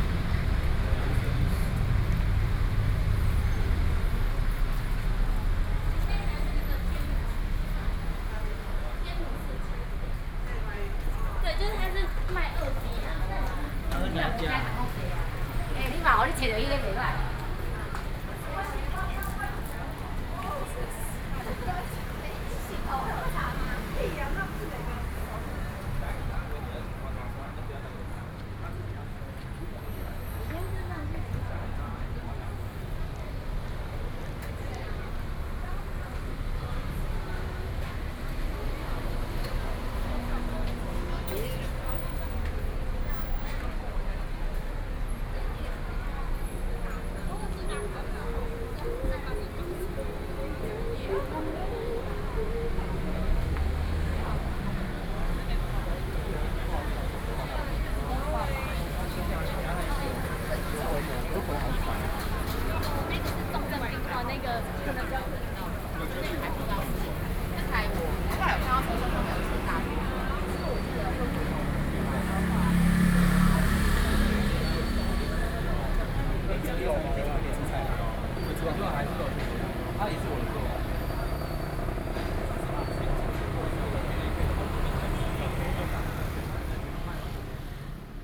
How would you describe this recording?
Walking on the streets, Various shops, Traffic Sound, Binaural recordings, Sony PCM D50